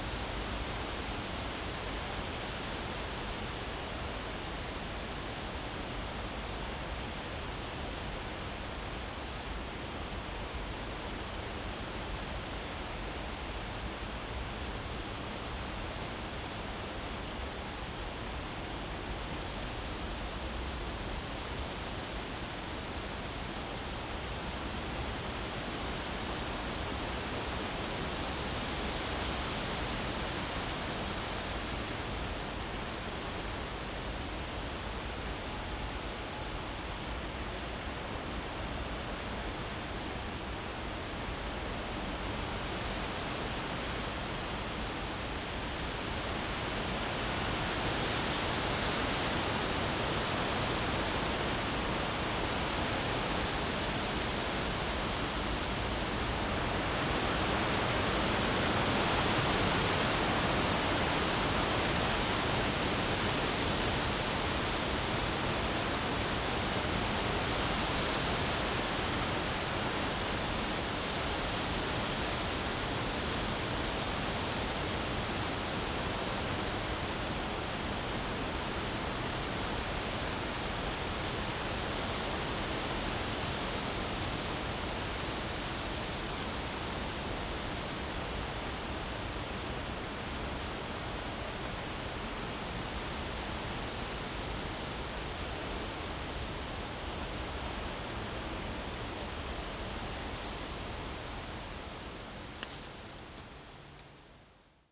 Tempelhofer Feld, Berlin, Deutschland - autumn morning wind
bright autumn morning, breeze in poplars
(SD702, AT BP4025)
Berlin, Germany